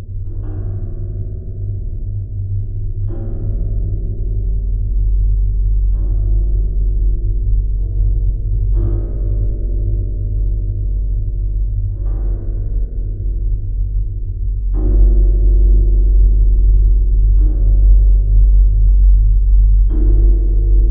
Lithuania, Sartai viewtower
Strong wind. Geophone on the stairways of observation tower.